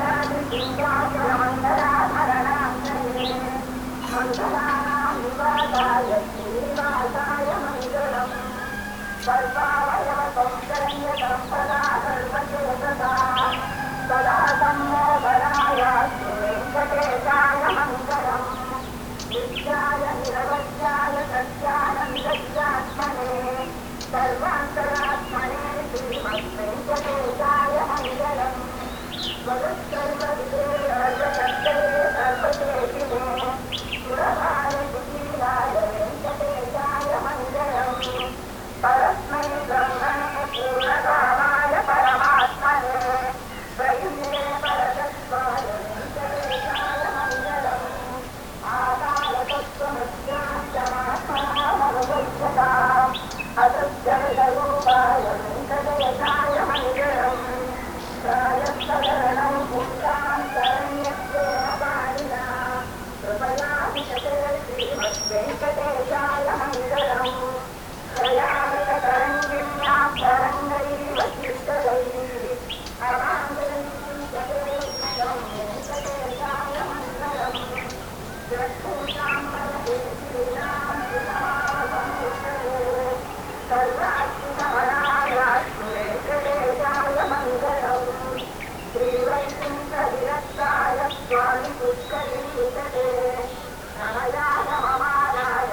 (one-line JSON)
{"title": "Munnar - Udumalpet Rd, Nullatanni, Munnar, Kerala 685612, India - Munnar - above the valley", "date": "2002-01-22 06:00:00", "description": "Munnar - above the valley, early morning", "latitude": "10.09", "longitude": "77.06", "altitude": "1472", "timezone": "Asia/Kolkata"}